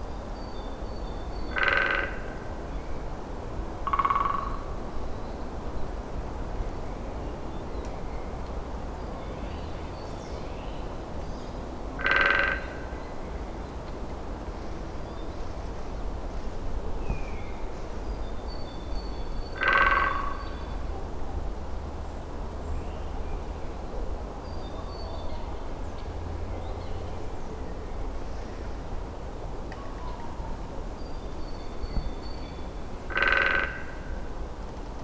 Morasko nature reserve, northerneast part - panned woodpeckers
two woodpekcer sitting very high, rapidly knocking their beaks against the tree trunk by turns.
Poznań, Poland